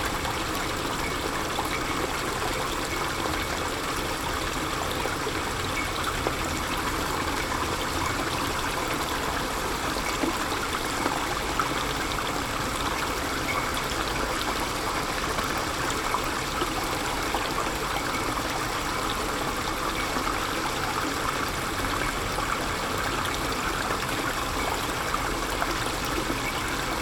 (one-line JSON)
{"title": "Levee Gravity Drain, Valley Park, Missouri, USA - Levee Gravity Drain", "date": "2020-08-22 13:53:00", "description": "Levee Gravity Drain. Ambient and contact mic.", "latitude": "38.55", "longitude": "-90.49", "altitude": "134", "timezone": "America/Chicago"}